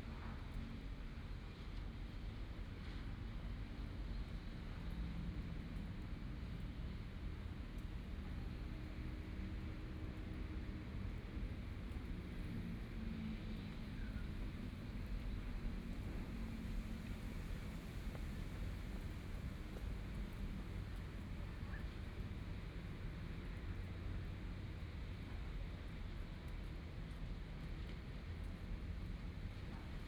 in the Park, Distant school students are practicing traditional musical instruments, Aircraft flying through
Binaural recordings, Sony PCM D100 + Soundman OKM II
Neihu District, Taipei City, Taiwan, 27 February 2014